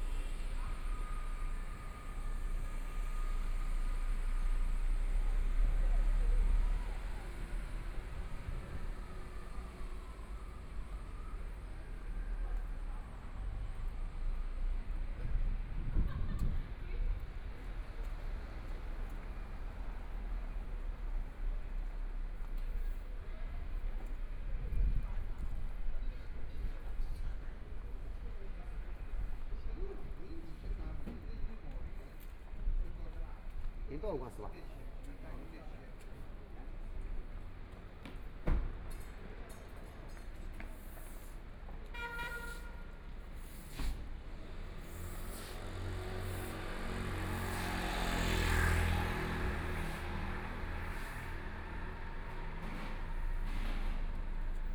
Walking through the old neighborhoods, Traffic Sound, Binaural recording, Zoom H6+ Soundman OKM II
Shanghai, China, 25 November 2013